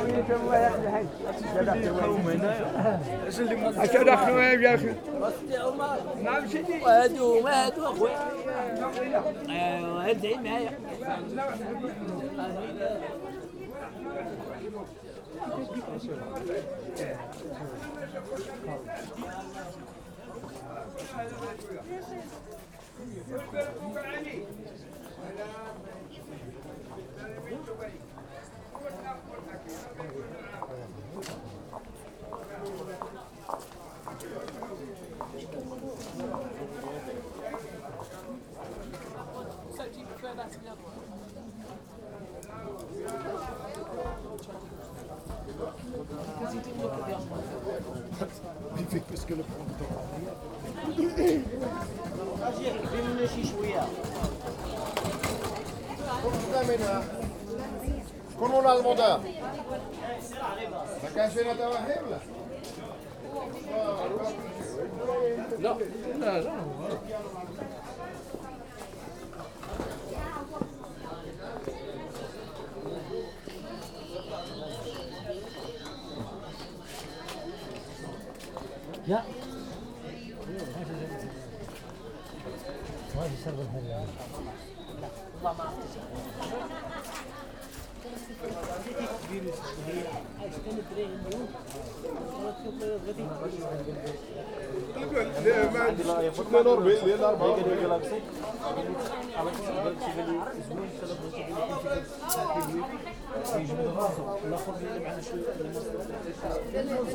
seems I had to make the quite common experience of getting lost in the Medina of Marrakech. then the sun went down and the battery of my phone too, no gps and maps to navigate. it took a while, with mixed feelings.
Marrakesh, Morocco, 26 February